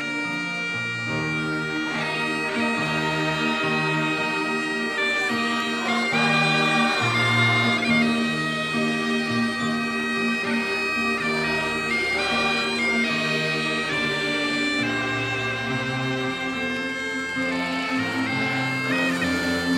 Comemorations of the Carnation Revolution/25 de abril in the town of Ançã, Cantanhede.
A group of children, locals, and town officials sing "Grandola Vila Morena" by Jose Afonso - one of the songs broadcasted as a military signal for the revolution.
25 April 2022, 11:30